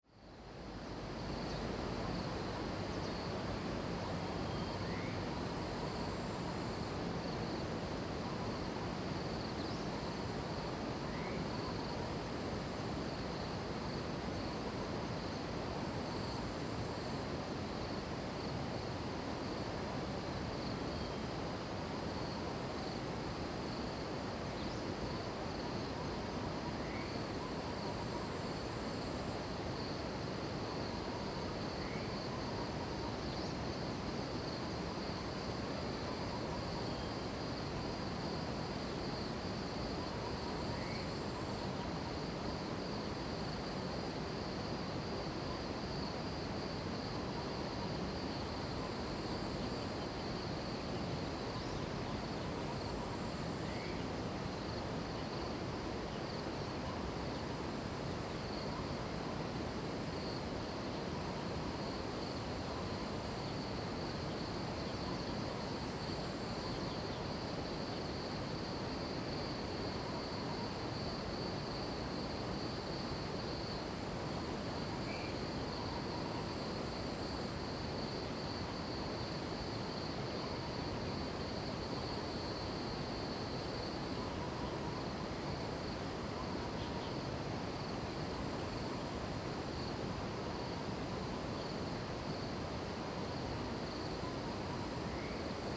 Beside farmland, Cicada sounds, Birds singing, Insects sounds, The sound of water streams
Zoom H2n MS+XY